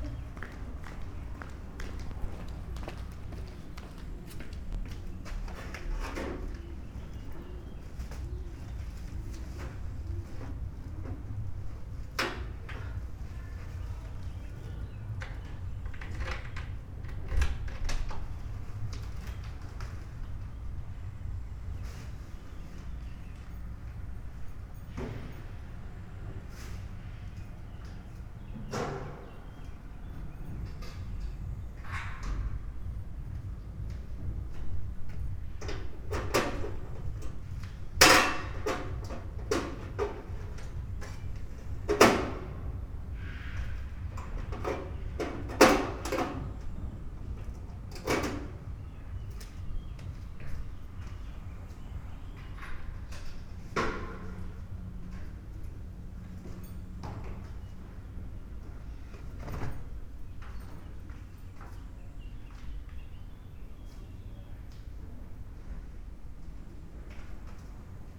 Buje, Croatia - old lady knitting by the window
quiet times around stony village, short conversation between neighbors, doors, keys, crickets ...